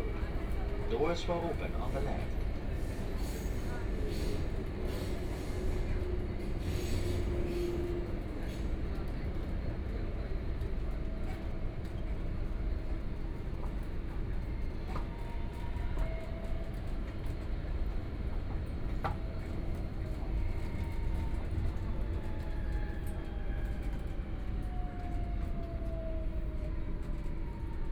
From Tongji University Station to Wujiaochang station, Binaural recording, Zoom H6+ Soundman OKM II
Yangpu, Shanghai, China